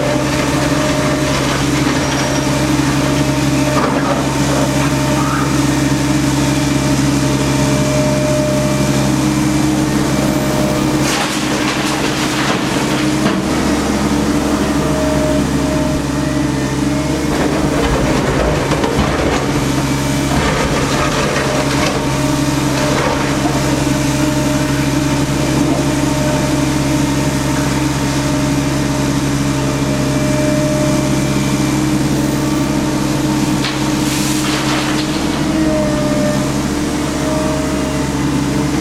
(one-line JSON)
{"title": "gelsenkirchen-horst - die schlangenwallstrasse wird aufgerissen", "date": "2009-08-25 13:15:00", "latitude": "51.53", "longitude": "7.03", "altitude": "29", "timezone": "Europe/Berlin"}